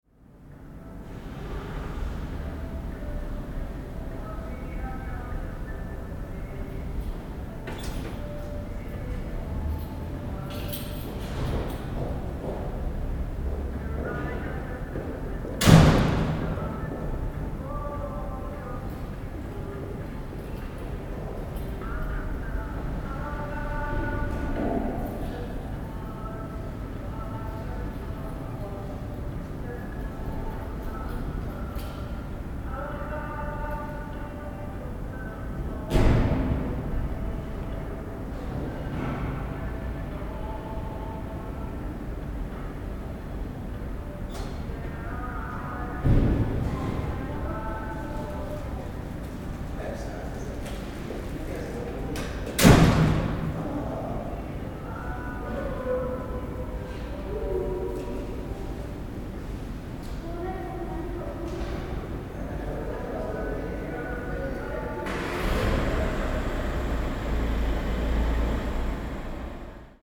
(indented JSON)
{
  "title": "Gesundbrunnencenter - Parkdeck",
  "date": "2009-03-18 20:10:00",
  "description": "18.03.2009 20:10 parking deck, closing time, tristesse, drabness ...",
  "latitude": "52.55",
  "longitude": "13.39",
  "altitude": "47",
  "timezone": "Europe/Berlin"
}